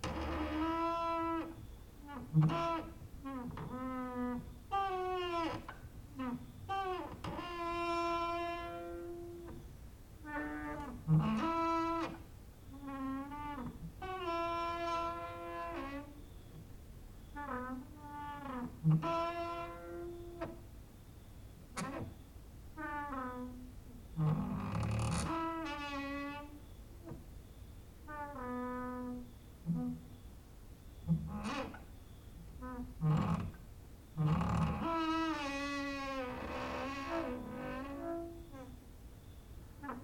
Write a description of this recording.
cricket outside, exercising creaking with wooden doors inside